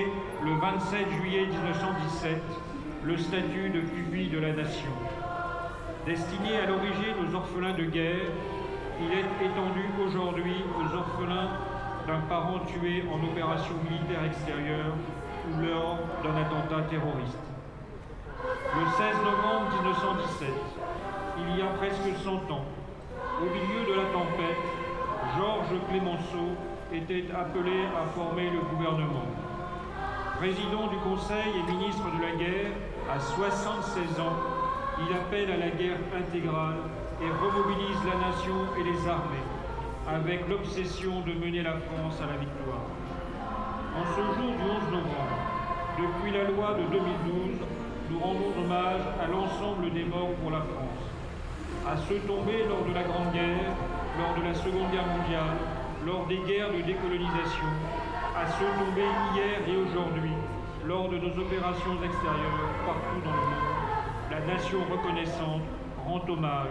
Fourneyron, Saint-Étienne, France - St-Etienne - 11/11/2017
St-Etienne - Loire
Place Fourneyron
Cérémonie du 11 novembre 2017